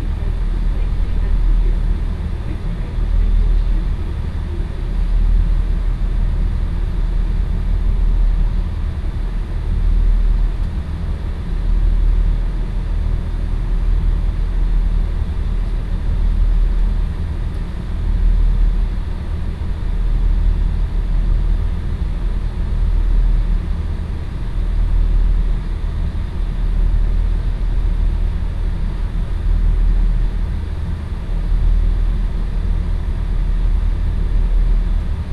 Gatwick Airport (LGW), West Sussex, UK - train drone
London Gatwick aiport, train station. engine ideling, heavy drone in train
(Sony PCM D50, OKM2 binaural)